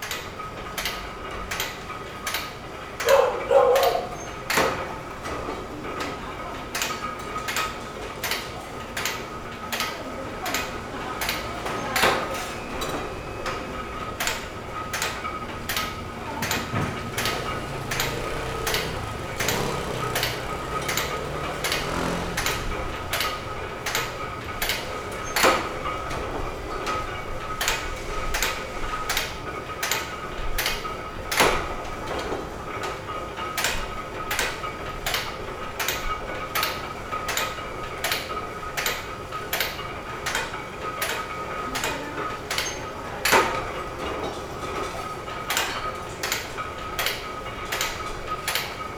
Sound from Factory
Zoom H4n +Rode NT4